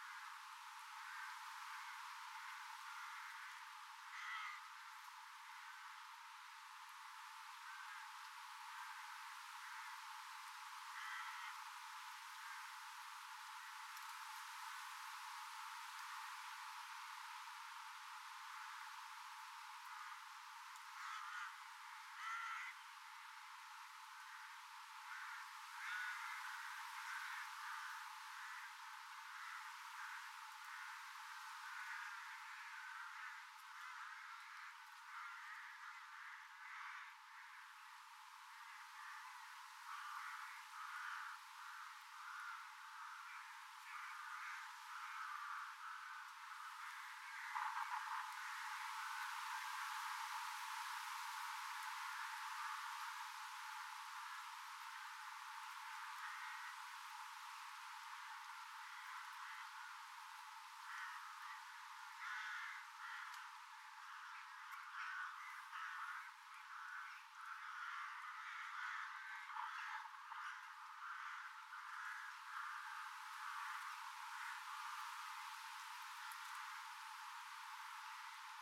Randers NØ, Randers, Danmark - Crows nesting
Here the crows nest and there are hundreds, making a nice show
Randers NØ, Denmark